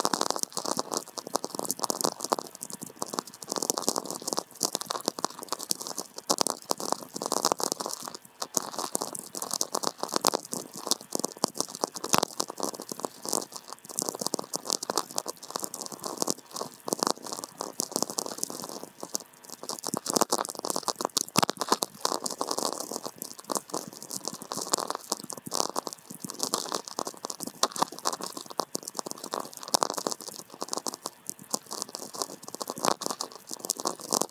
Pakalniai, Lithuania, atmospheric VLF
standing with VLF receiver on the ancient mound. distant lightnings....
Utenos apskritis, Lietuva, 23 June 2020, 14:20